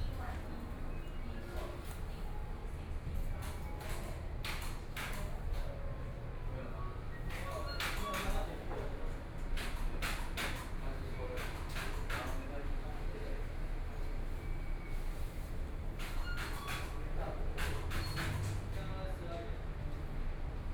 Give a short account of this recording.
In the convenience store, Binaural recordings